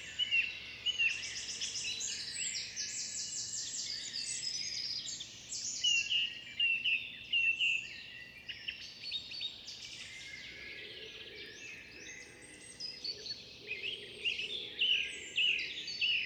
Beselich Niedertiefenbach, Ton - pond in forest, morning birds chorus
pond in forest, early morning, bird chorus, distant churchbells from two villages, a plane.
Germany